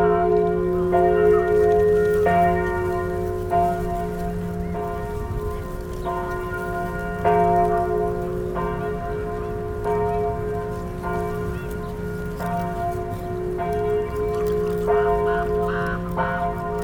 København, Denmark - Lake ambiance and distant bells
Sounds of the birds swimming : Eurasian Coot, Common Moorhen, Greater White-fronted Goose. At the backyard, the bells ringing 6PM. Pleasant distant sound with the lake ambiance.